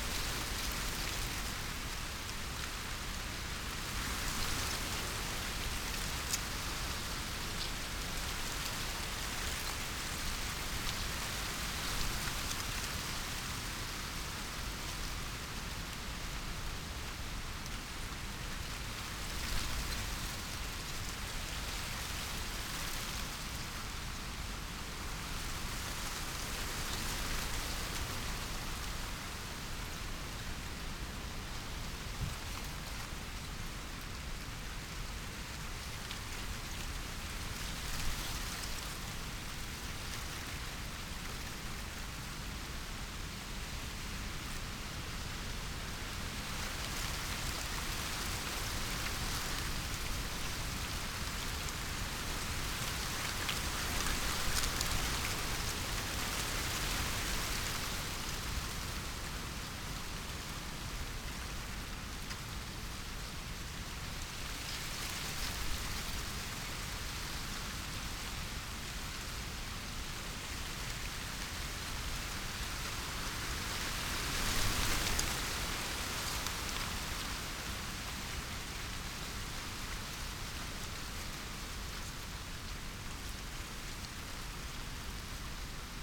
in a field of maize ... pre-amped mics in a SASS ... distant bird calls from carrion crow ... red-legged partridge ... the maize plants are dessicated and dead ... the plants are you used as cover for game birds ... pheasant ... red-legged partridge ... in the next few weeks the crop will be ploughed in ...